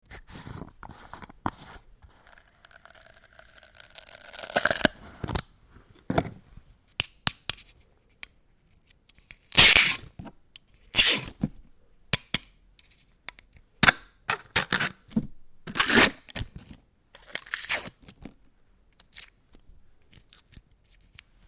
Opening a Glass of NUTELLA
Opening a new glass of "Nutella", the (in Germany very popular) hazelnut-based sweet spread by the Italian company Ferrero creates a unique sound, that EVERY German child knows!
The unlocking sound, when turning the cap, pushing through the aluminium foil that spans over the glass ... hmmm